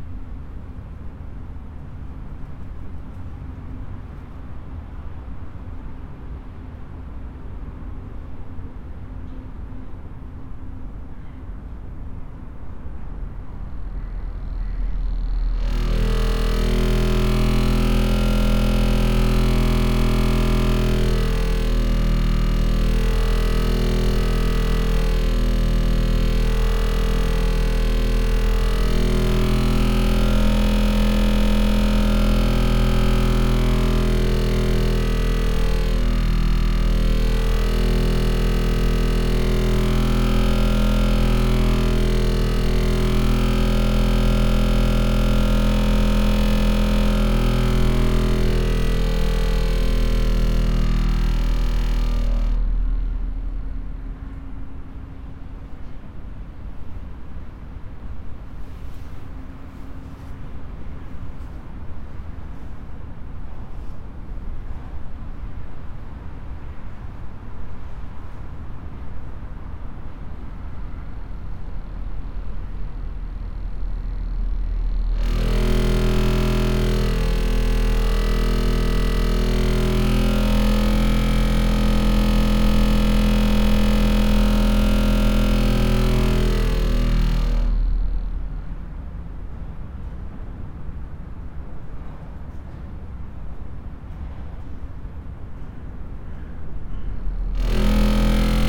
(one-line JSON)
{"title": "Seraing, Belgique - Wind playing", "date": "2017-03-18 16:40:00", "description": "In an abandoned coke plant, the wind is playing with a metal plate, which vibrates at every gust. This noise is only made by the wind.", "latitude": "50.61", "longitude": "5.53", "altitude": "65", "timezone": "Europe/Brussels"}